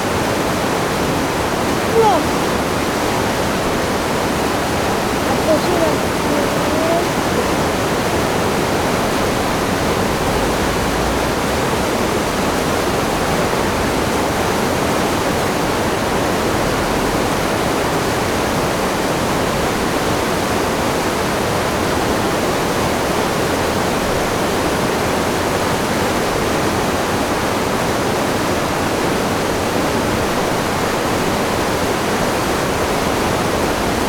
L'Aigle, France - La Risle à l'Aigle
Rivière La Risle, qui coule au centre de l'Aigle
13 February 2014